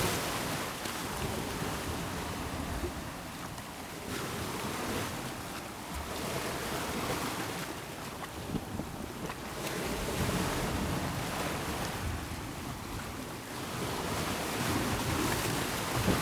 Whitby, UK - Rising tide ...
Incoming tide ... open lavaliers on t bar fastened to fishing landing net pole ...
25 November 2016, 10:20